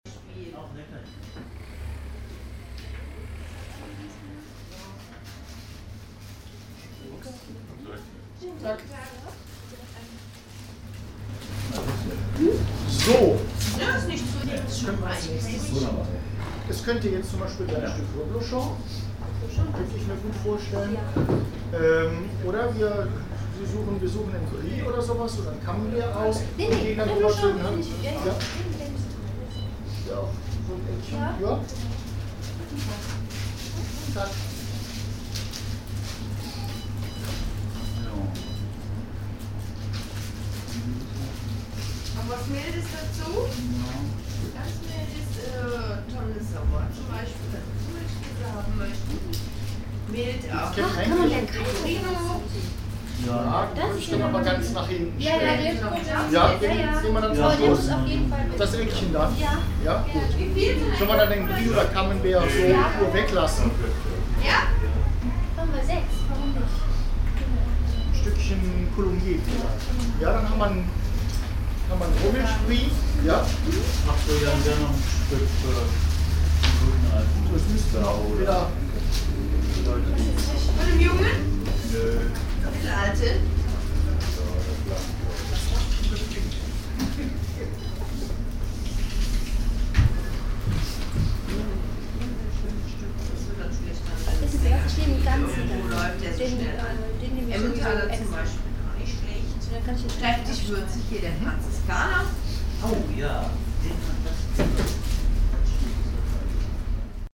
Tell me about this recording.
in a specialised cheese selling shop around midday, soundmap nrw: social ambiences/ listen to the people in & outdoor topographic field recordings